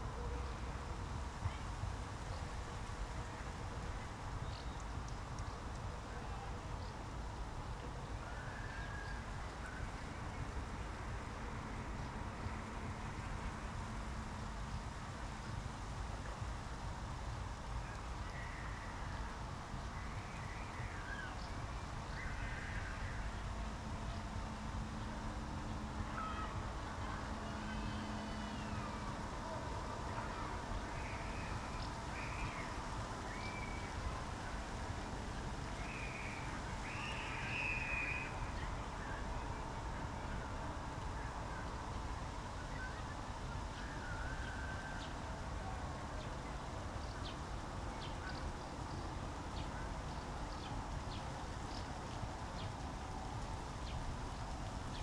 Tempelhofer Feld, Berlin, Deutschland - early evening ambience, at the poplar trees
place revisited in August
(Sony PCM D50, Primo EM172)